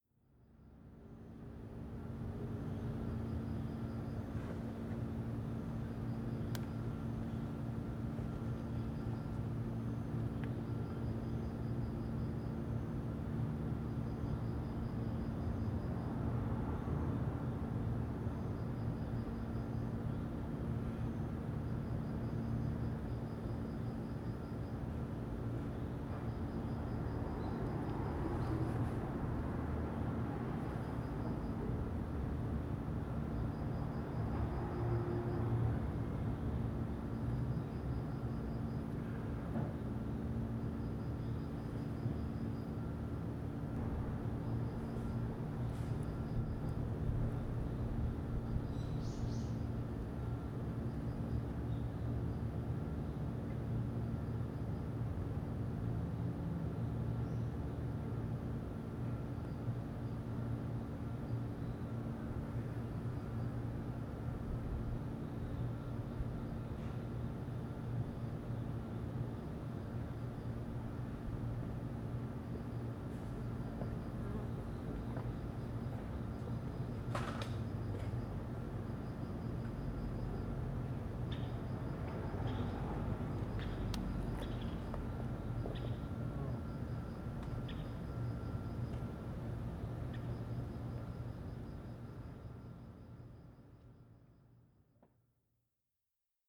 대한민국 서울특별시 서초구 방배5주택재건축지역 - Bangbae 5th Deconstruction Zone, AC Outdoor Unit
Bangbae 5th Deconstruction Zone, AC Outdoor Unit
방배5주택재건축지역 에어컨 실외기